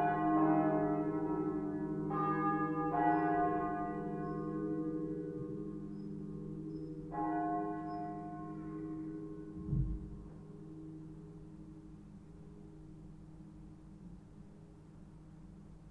Burgstraße, Lingen (Ems), Deutschland - Sunday Morning Bells, St. Bonifatius Church
8 am, Sunday morning, recorded from across the church
Sound Devices Recorder and beyerdynamics MCE82 mic
First aporee recording from this rural region called "Emsland" :-)